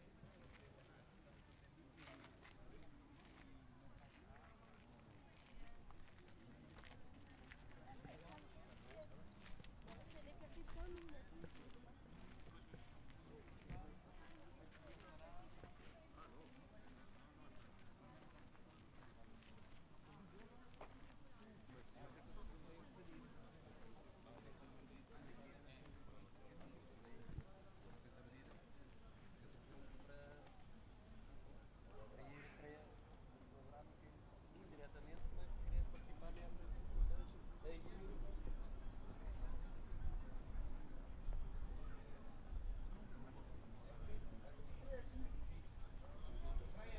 Pateo de São Miguel - Patéo de S. Miguel>> Templo>>P. Giraldo >> R.5 Outubo>> Sé
Late afternoon walk around old Evora Patéo de S. Miguel>> Templo>>P. Giraldo >> R.5 Outubo>> Sé
Évora, Portugal, 23 August 2017